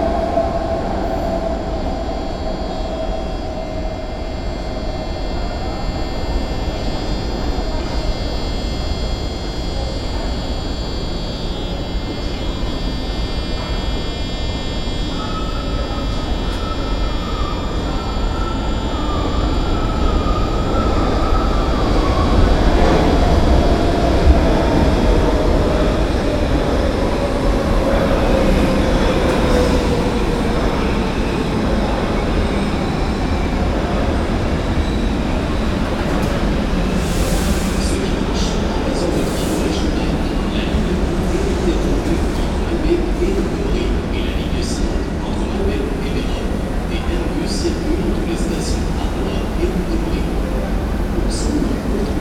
Brussels, Métro Louise and further.